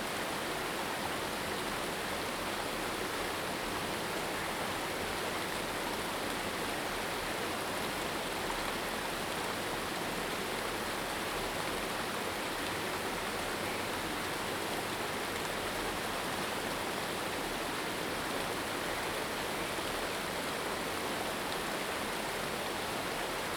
埔里鎮桃米溪, Puli Township - Stream sound
Stream sound, Bird sounds
Zoom H2n MS+XY
26 March, 07:13